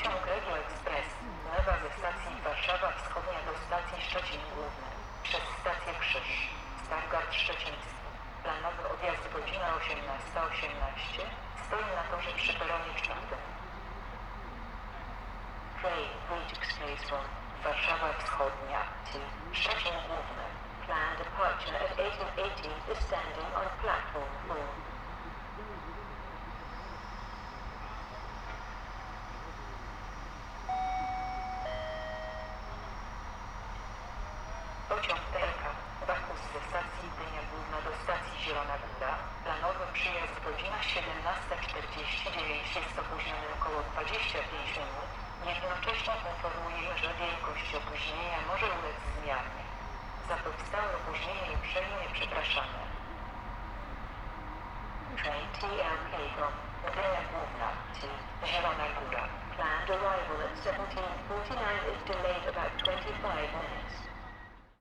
Poznan, main train station, platform - announcements in the cold
delayed trains announcements. hum of a resting train engine in the distance
15 November 2012, ~18:00